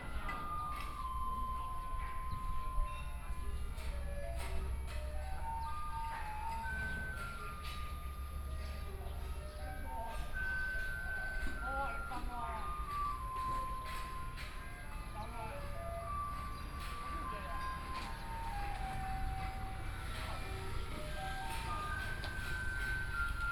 岳明國小, Su'ao Township - In front of the primary school
In front of the primary school, Hot weather, Traffic Sound, Birdsong sound, Small village, Garbage Truck, Sound from Builders Construction